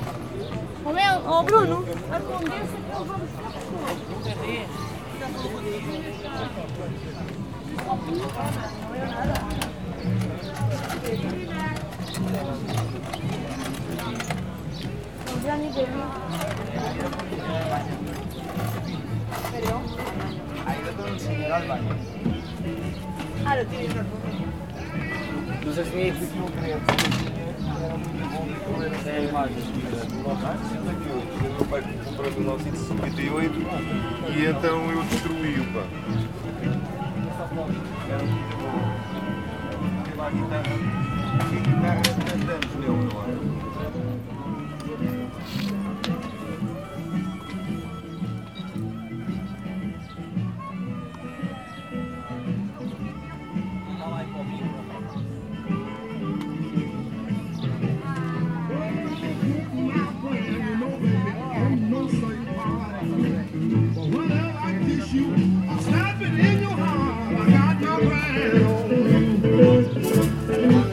Lisbonne, Portugal - Feira da Ladra: flea market
Feira da Ladra: flea market that takes place every Tuesday and Saturday in the Campo de Santa Clara (Alfama)